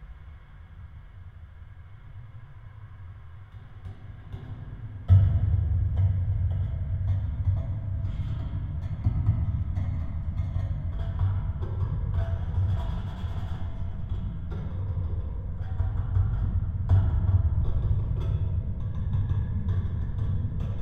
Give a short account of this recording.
Nagranie zrealizowane mikrofonami kontaktowymi. Spacery Dźwiękowe w ramach pikniku Instytutu Kultury Miejskiej